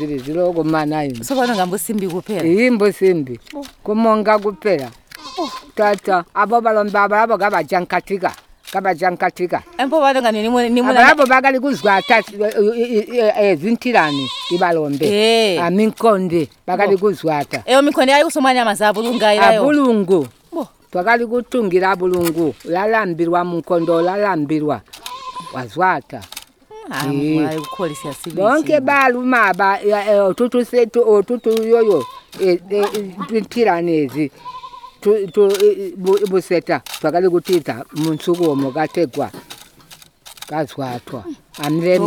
Sikalenge, Binga, Zimbabwe - Our life as women of the Great River...
Lucia Munenge visits and interviews three old ladies who live together as they were married to the same husband. Ester Muleya describes the lives of women and girls, when the BaTonga were still living at the Zambezi river. She was a girl at the time of their forceful removal from the river by the colonial government in 1957. She describes the two farming season, the Batonga used to follow at the river and the staple crops they used to plant: Maize, pumpkin and Tonga beans near the river; Millet, Maize and Sorghum far away from the river when it was flooding during the rainy season. Ester mentions and recounts the process of purifying cooking oil from roasted and pounded pumpkin seeds; using either the powder directly in cooking or, boiling the powder in water to extract the oil. Ester describes the bead ornaments which the BaTonga women and girls used to be wearing - necklaces, bungles and earrings – especially when dancing and singing.